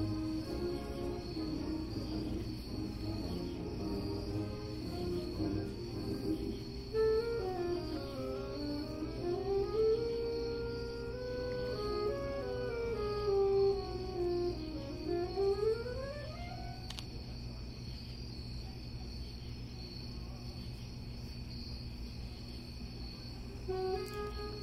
The College of New Jersey, Pennington Road, Ewing Township, NJ, USA - Saxophones
Saxophones rehearsing at night